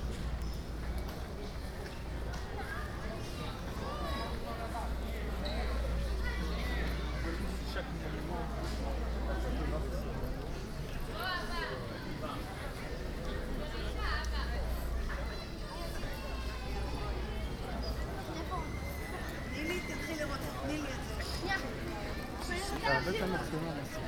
Short stroll through the touristic centre of Brugge.
Zoom H2 with Sound Professionals SP-TFB-2 binaural microphones.